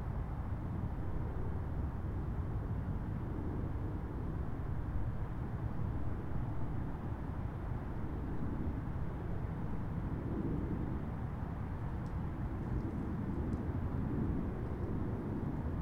Tolleson Park, McCauley Rd, Smyrna, GA, USA - 2020 Winter Solstice Stargaze
A member of my family and I were here in order to view the great conjunction between Jupiter and Saturn on the solstice. A couple of other families were also here for the viewing, but they leave at the beginning of the recording. There's a little bit of wind blowing the leaves around and traffic is heard in the background. Taken with the onboard unidirectional mics of the Tascam Dr-100mkiii.
Georgia, United States, December 2020